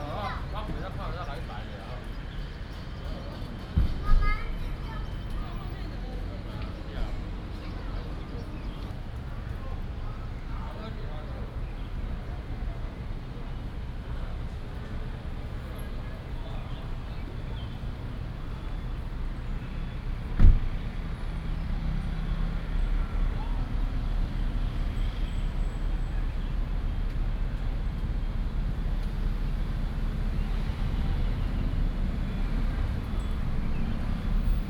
沙崙路, 新北市淡水區大庄里 - Sitting in the street
Sitting in the street, Traffic Sound